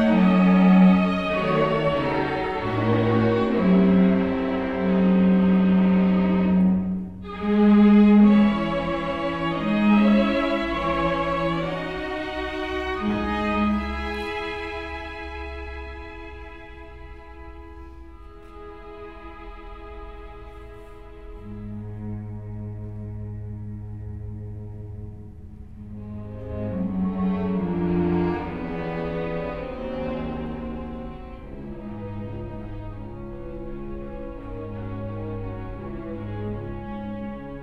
Palazzo del Governo, Rijeka, string quartet
String quartet Dominant from Moscow
Rijeka, Croatia, 5 March 2010